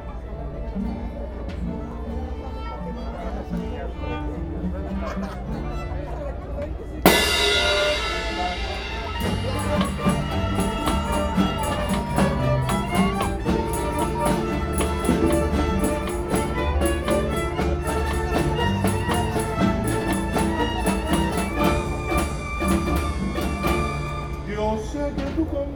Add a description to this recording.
Banda Municipal de León, Guanajuato playing some songs next to the kiosk in the city center. People sitting nearby listening to the music while eating some ice cream or chips. I made this recording on April 24, 2019, at 6:53 p.m. I used a Tascam DR-05X with its built-in microphones and a Tascam WS-11 windshield. Original Recording: Type: Stereo, Banda Municipal de León, Guanajuato tocando algunas canciones junto al quiosco del centro de la ciudad. Gente sentada en los alrededores escuchando la música mientras comían algún helado o papitas fritas. Esta grabación la hice el 24 de abril 2019 a las 18:53 horas.